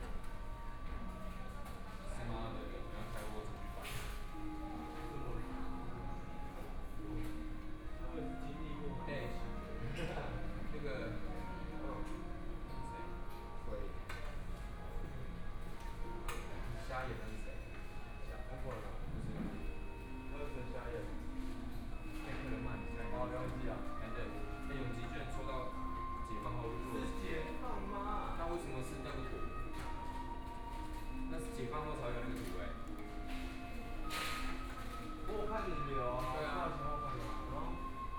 Zhongzheng Rd., Hualien City - In the fast food restaurant
In the fast food restaurant, McDonald's
Please turn up the volume
Binaural recordings, Zoom H4n+ Soundman OKM II
24 February 2014, ~15:00